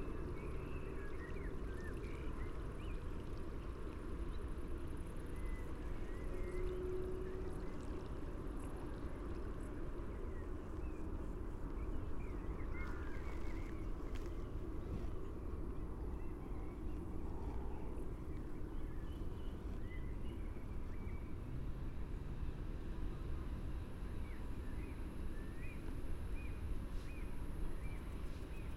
Dijk, Kunstgemaal Bronkhorst, Netherlands - night parabol
Nighttime recording. Telinga Parabolic microphone.
Recording made for the project "Over de grens - de overkant" by BMB con. featuring Wineke van Muiswinkel.